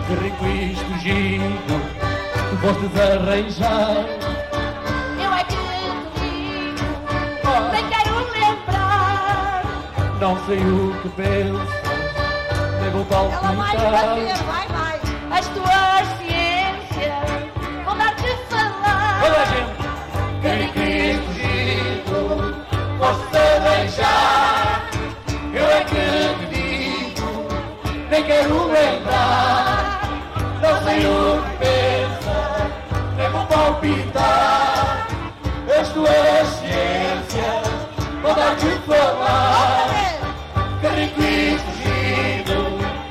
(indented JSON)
{
  "title": "4.Albergaria dos Doze, Leiria, Portugal. Folk band family(by A.Mainenti)",
  "latitude": "39.91",
  "longitude": "-8.63",
  "altitude": "77",
  "timezone": "Europe/Berlin"
}